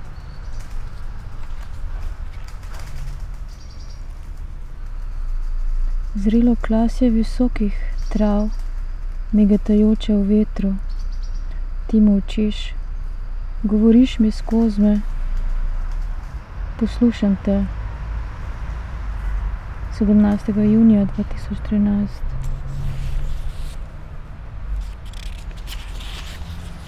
poems garden, Via Pasquale Besenghi, Trieste, Italy - reading poem
pramen svetlobe skoz prašno vročino zjutraj
ostanki temin na robovih
tišina, molčiš?
in traja. čas traja, molk traja
tišina je hip, 18. junija 2013
zrelo klasje visokih trav
migetajoče v vetru
ti molčiš
govoriš mi skozme, poslušam te, 17. junija 2013